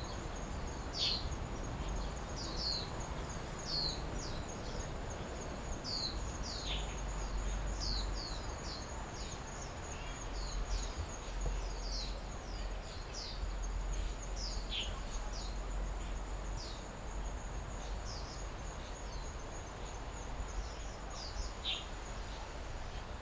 Under the tree, Hot weather, Birdsong, Traffic Sound
Zoom H6 MS mic+ Rode NT4